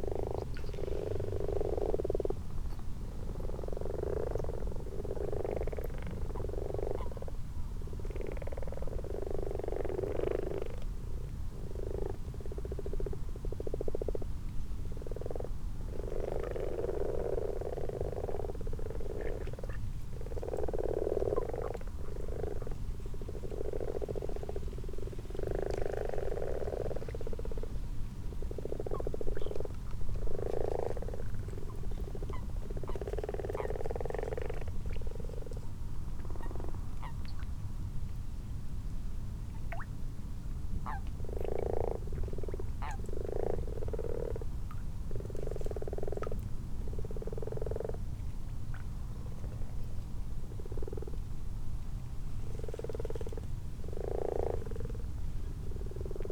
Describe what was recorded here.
common frogs and common toads in a pond ... xlr sass on tripod to zoom h5 ... time edited unattended extended recording ...